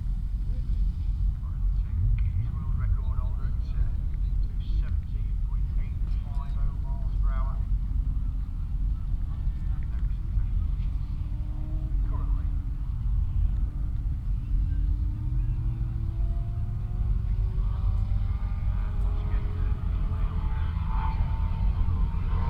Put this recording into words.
Motorcycle Wheelie World Championship 2018 ... Elvington ... 1 Kilometre Wheelie ... open lavalier mics clipped to a sandwich box ... blustery conditions ... positioned just back of the timing line finish ... all sorts of background noise ...